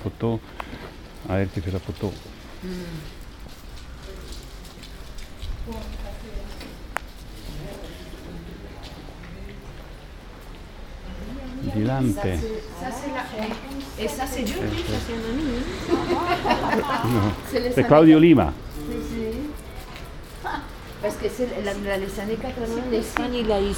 Carrer de la Plaça, Portbou, Girona, Spagna - Port Bou October 2019: le Maître et les Disciples
Port Bou, Thursday October 3rd, 11:51 a.m. A group of artists, architects, philosophers, musicians, students staying and walking in dialogue on the stairs of Carre de la Plaça.